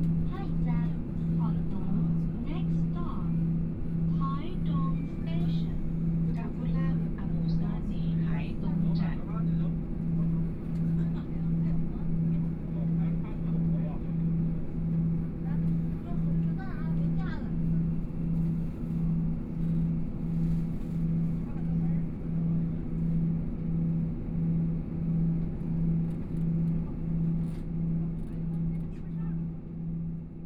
from Ruiyuan Station to Luye Station, the sound of message broadcasting, Train noise, Binaural recordings, Zoom H4n+ Soundman OKM II
Luye Township, Taitung County, Taiwan, 15 January